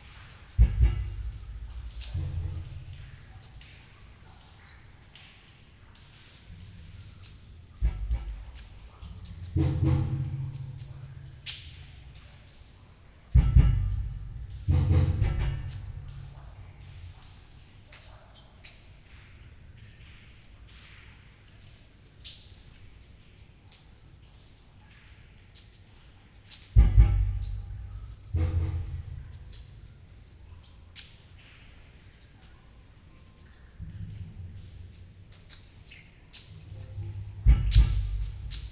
Valenciennes, France - Sewers soundscape
Sounds of the manholes, into the Valenciennes sewers. The traffic circle makes some redundant impacts.